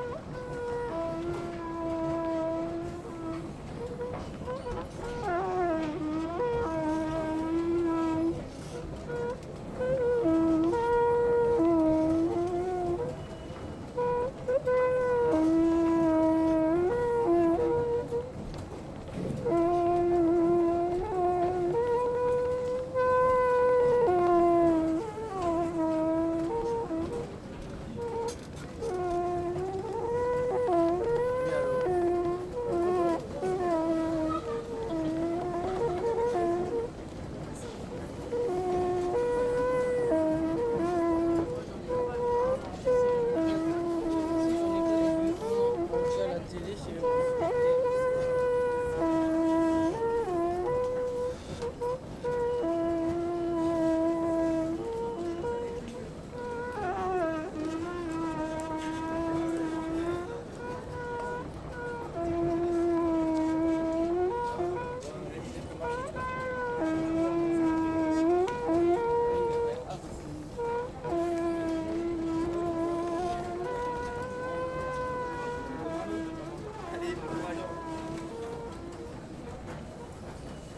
Les Halles, Paris, France - la litanie d'un escalator
il crie et tout le monde s'en fout